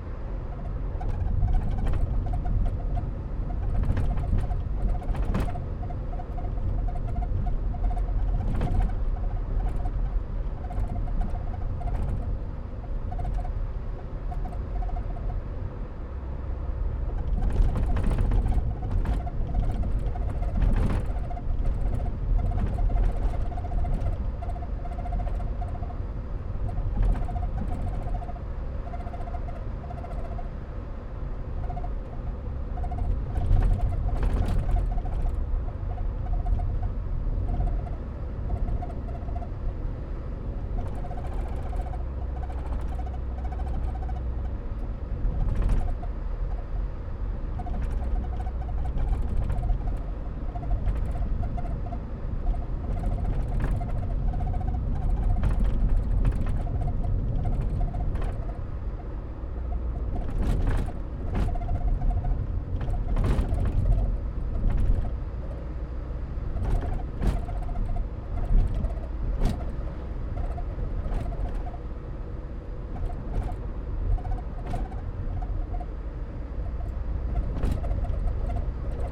Kramfors N, Sverige - Snowy mountain drive in old Volvo
Movable recording from inside my old Volvo on snowy bumpy roads in the mountains down to a less bumpy coastland rainy urban place, and the car (which isn´t in very perfect state) made some wonderful composition by itself - so i had to record this. The actual road is the mountain road between Sollefteå and Örnsköldsvik. When the recording is starting we´re somewhere around Gålsjö and when it is stopping we´re in Örnsköldsvik city by the seacoast. The climate changes as the vehicle moves more down to the sealevel. More high up there is a lot of snow (and problems with the state of the road aswell).
Some notes written on the 3rd jan 2012:
the actual car journey was made in december, around the 8th 9th or
something thereabout - and captures a sound i have been hearing for
years in my car - - that only comes through under some special
conditions - it´s like the weather humidity is affecting the
squeeking... well you will hear - it´s not very special except this
Sweden, 10 December 2011